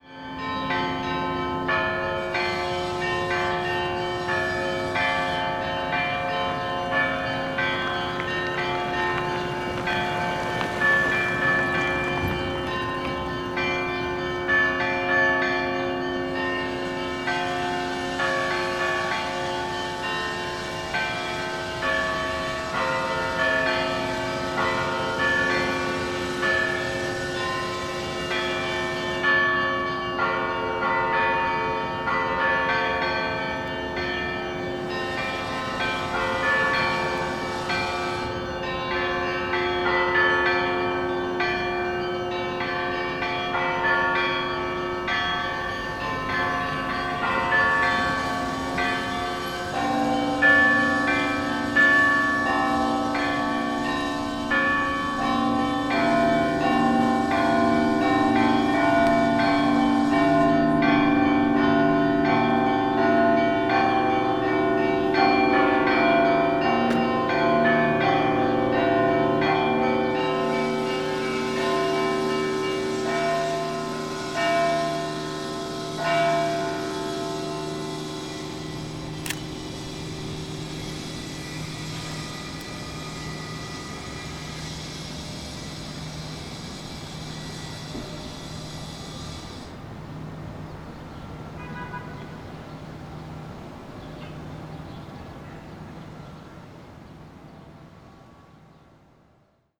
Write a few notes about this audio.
Easter, Church Bells, Grinder, Street